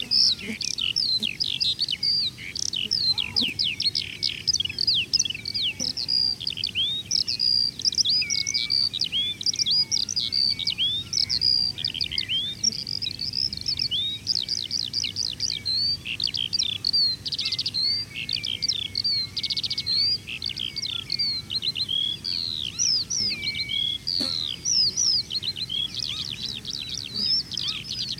Higham Saltings, Gravesend, UK - Skylarks on Higham Saltings
Skylarks, flies, frogs, and general atmosphere on Higham Saltings, Kent, UK.